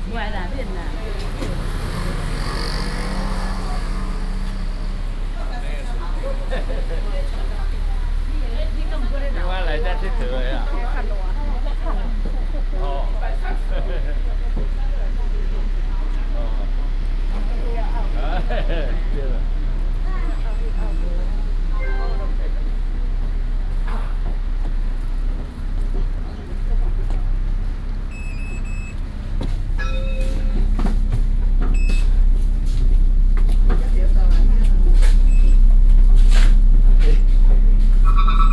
烏來區烏來里, New Taipei, Taiwan - At the bus station
At the bus station, Ready to take the bus